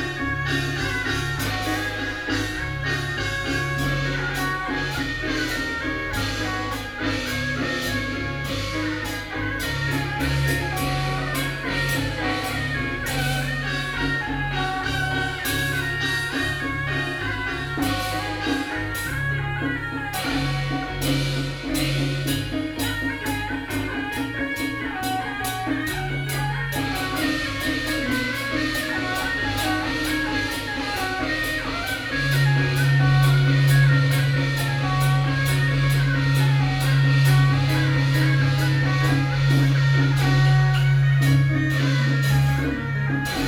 Beitou - Taiwanese Opera
Taiwanese Opera, Sony PCM D50 + Soundman OKM II